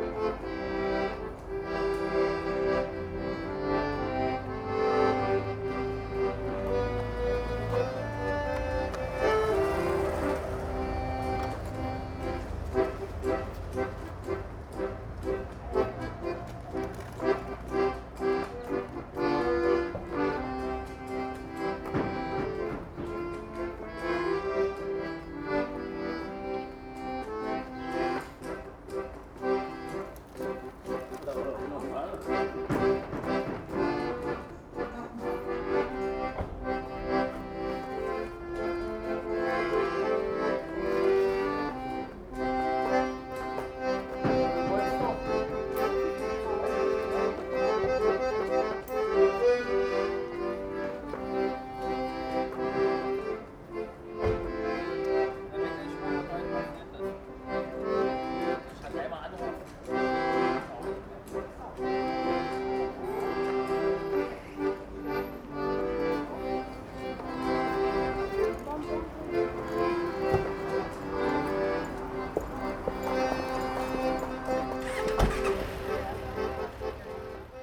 Accordionist outside the Arminius Halle
Often playing beside the entrance to the market hall and saying hello as you pass by.
Berlin, Germany